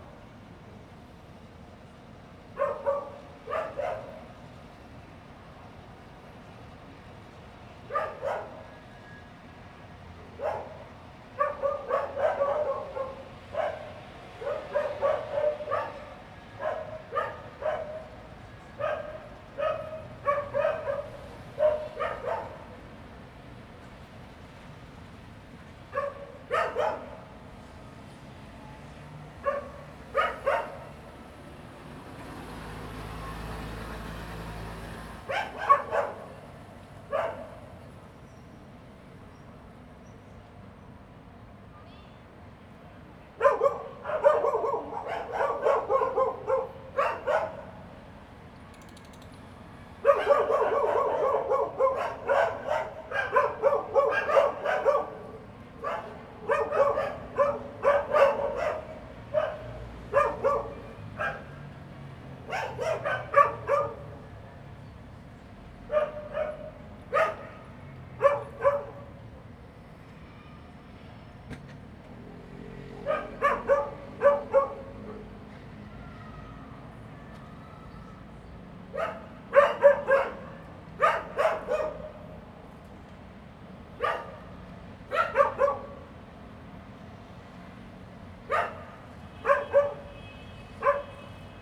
天空格子, Magong City - Dogs barking
In Hostel, Dogs barking
Zoom H2n MS+XY
Magong City, Penghu County, Taiwan, 22 October 2014